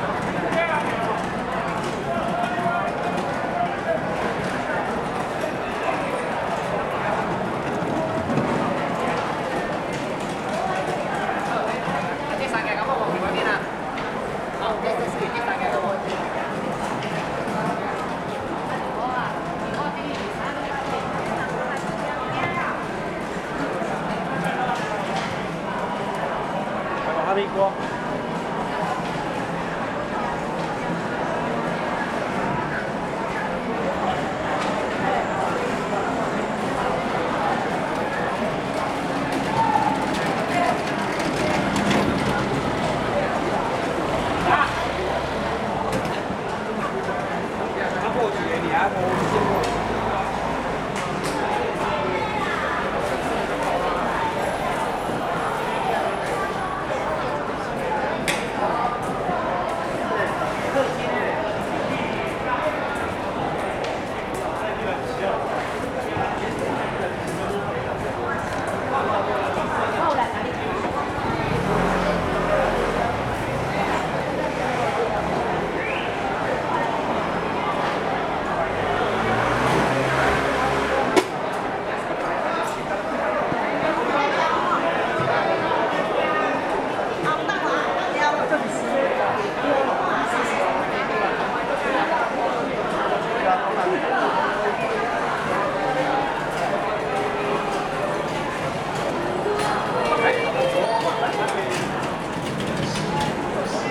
Fruits and vegetables wholesale market
Sony Hi-MD MZ-RH1 +Sony ECM-MS907
8 March 2012, 06:02